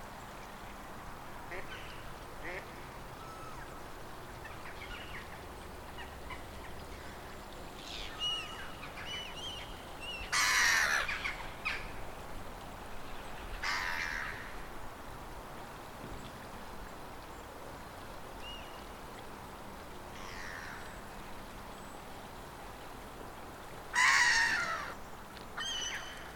River Coquet, Warkworth, Northumberland. United Kingdom - River Coquet Wildlife, Warkworth.
A detailed recording of wildlife and suroundings at the bend of the River Coquet as it leaves the cozy village of Warkworth, Northumberland.
Recorded on an early Saturday afternoon in the Spring 2015.